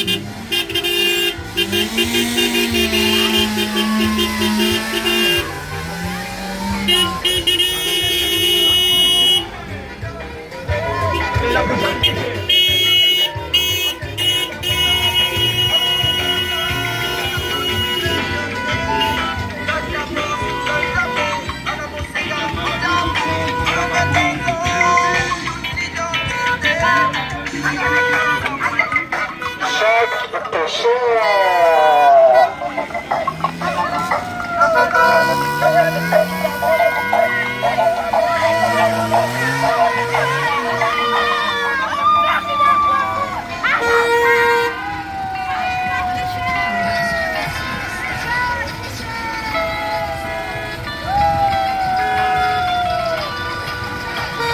Rue de la Mare A Joncs, Réunion - 20200626 debauche-electorale CILAOS-.mare-a-jonc
20200626 vers 21h passage du cortège au bord du petit lac, CILAOS